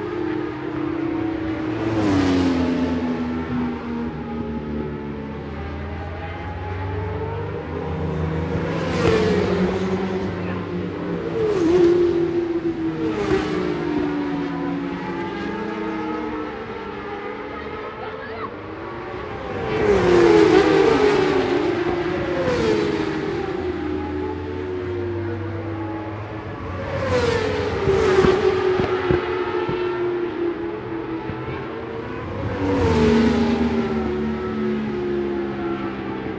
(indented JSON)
{
  "title": "Scratchers Ln, West Kingsdown, Longfield, UK - BSB ... 600 FP2",
  "date": "2005-03-26 14:10:00",
  "description": "British Superbikes ... 600 FP 2 ... one point stereo mic to minidisk ...",
  "latitude": "51.36",
  "longitude": "0.26",
  "altitude": "133",
  "timezone": "Europe/London"
}